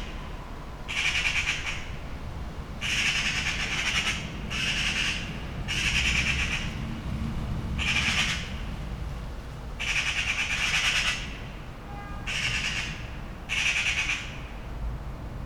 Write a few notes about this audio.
a bunch of young magpies make a fuss in the trees. friendly late summer friday afternoon, (PCM D50)